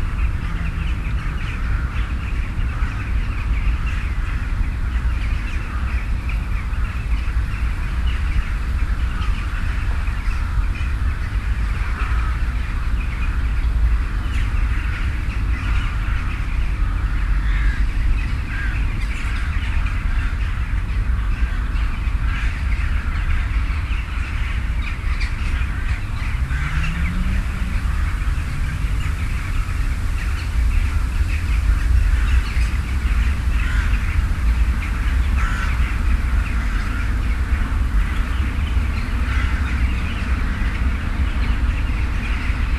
{"title": "Birds in Narva Rd park, Tartu, Estonia", "latitude": "58.38", "longitude": "26.73", "altitude": "40", "timezone": "Europe/Berlin"}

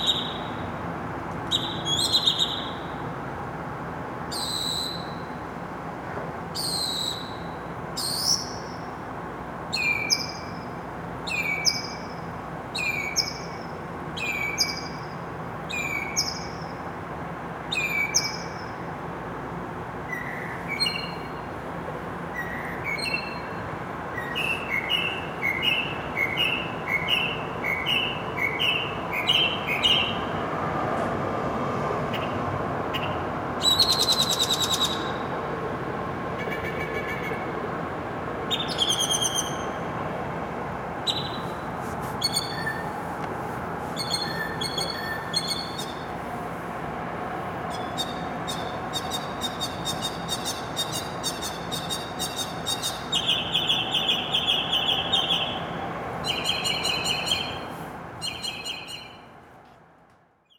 {"title": "Solo Mockingbird Between Buildings, Neartown/ Montrose, Houston, TX, USA - Mockingbird Outside Susan's Apartment", "date": "2013-04-06 04:15:00", "description": "Still at it after 4 a.m., we decided to record him sans background music. These birds are always loud but this one was particularly close and sandwiched between some brick buildings, causing a short snap-back effect.\nSony PCM D50", "latitude": "29.74", "longitude": "-95.39", "altitude": "17", "timezone": "America/Chicago"}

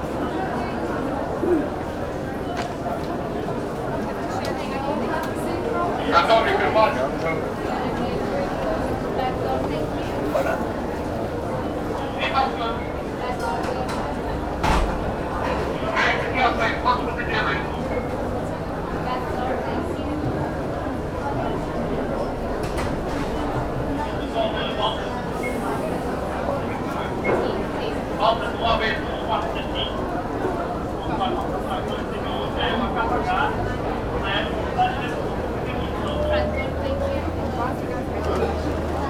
{"title": "Madeira, Aeroporto da Madeira - walkie-talkie", "date": "2015-05-19 17:27:00", "description": "crack of a walkie-talkie left on a counter. passengers are instructed which part of plane to board. crowd mumbling and oozing towards the bus.", "latitude": "32.69", "longitude": "-16.78", "altitude": "54", "timezone": "Atlantic/Madeira"}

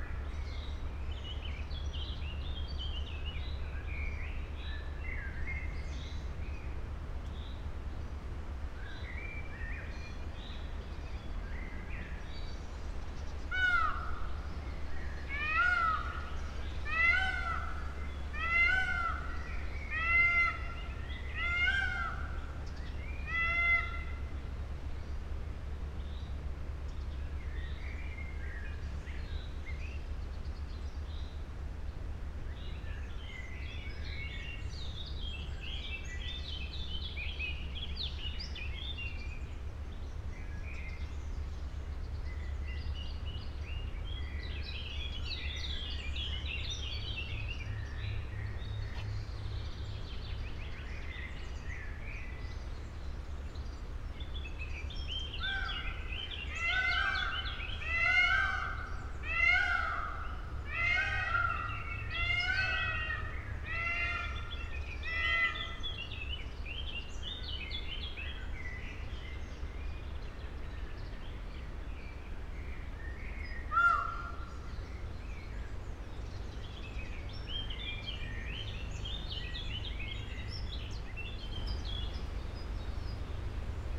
{"title": "Pfaueninsel, Berlin, Germany - at the smaller peacock cage", "date": "2013-05-15 12:37:00", "description": "screams and other voices of peacocks, birds, wind through tree crowns ...\nsonic research of peacock voices at their double caging site - island as first, metallic pavilion as second", "latitude": "52.43", "longitude": "13.13", "altitude": "50", "timezone": "Europe/Berlin"}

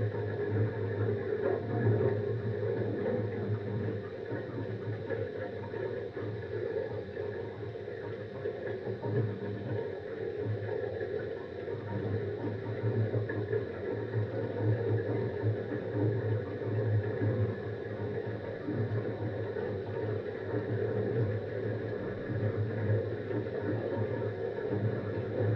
{"title": "Schulstr., Beselich Niedertiefenbach - heating flow (contact)", "date": "2017-07-18 10:15:00", "description": "heating at work, sound of water flow within tubes\n(Sony PCM D50, DIY contact mics)", "latitude": "50.44", "longitude": "8.14", "altitude": "208", "timezone": "Europe/Berlin"}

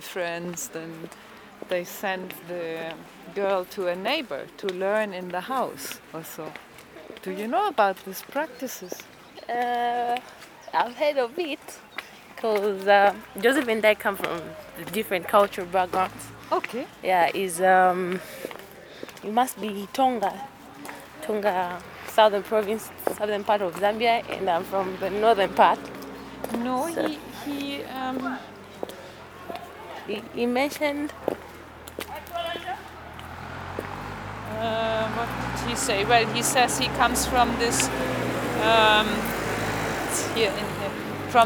{"title": "Showgrounds, Lusaka, Zambia - We’ve lost the ties to the village…", "date": "2012-07-20 16:29:00", "description": "We are with the visual artist Mulenga Mulenga walking in the Showgrounds of Lusaka from the Visual Arts Council to the “Garden club” café to record the interview with her…\nplaylist of footage interview with Mulenga", "latitude": "-15.40", "longitude": "28.31", "altitude": "1261", "timezone": "Africa/Lusaka"}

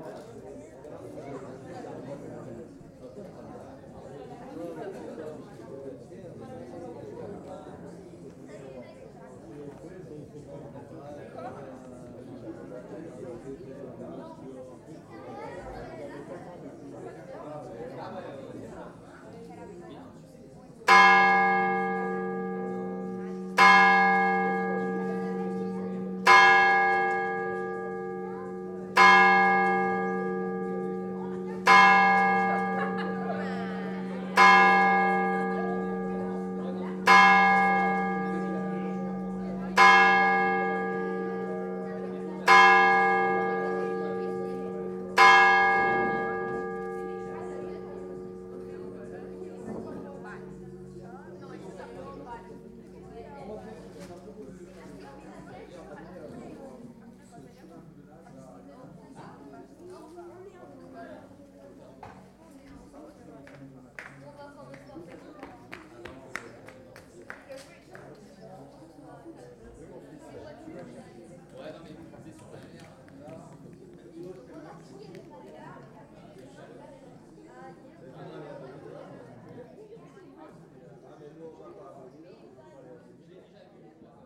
{"title": "Plaça Major, Tàrbena, Alicante, Espagne - Tàrbena - Espagne Ambiance du soir", "date": "2022-07-12 22:00:00", "description": "Tàrbena - Province d'allicante - Espagne\nAmbiance du soir place du village et clocher 22h\nZOOM F3 + AKG C451B", "latitude": "38.69", "longitude": "-0.10", "altitude": "561", "timezone": "Europe/Madrid"}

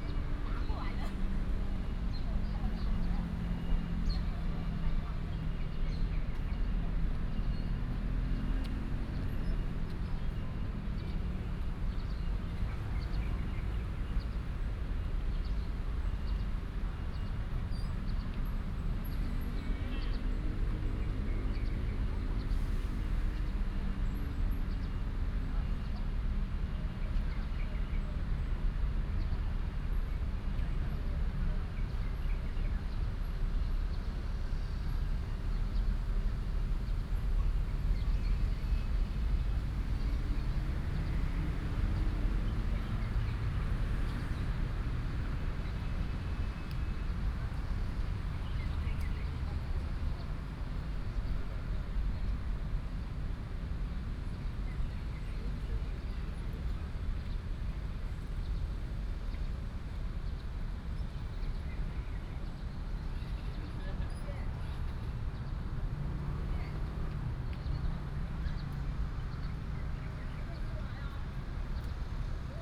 {"title": "龍潭運動公園, Longtan Dist., Taoyuan City - In sports park", "date": "2017-08-14 18:08:00", "description": "In sports park, birds sound, traffic sound, Athletic field", "latitude": "24.87", "longitude": "121.22", "altitude": "234", "timezone": "Asia/Taipei"}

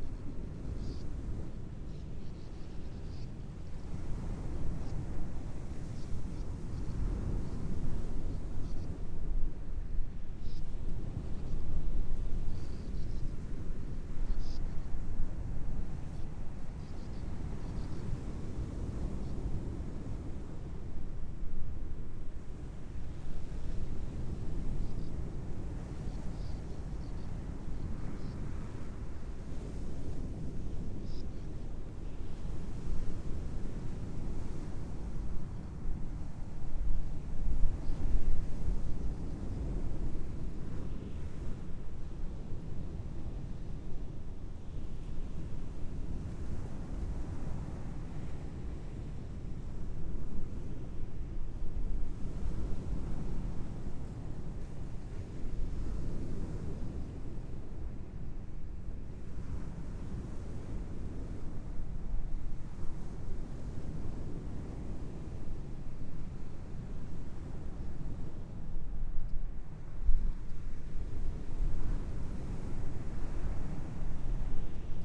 {"title": "Covehithe Beach, Suffolk, UK - sand martins", "date": "2022-05-09 16:03:00", "description": "Sand Martins can just about be heard coming and going as they fight over nesting holes in the cliffs high up above this lovely, windswept beach at low tide, at Covehithe. Not the best microphones, but the martins were so beautiful.", "latitude": "52.35", "longitude": "1.69", "altitude": "3", "timezone": "Europe/London"}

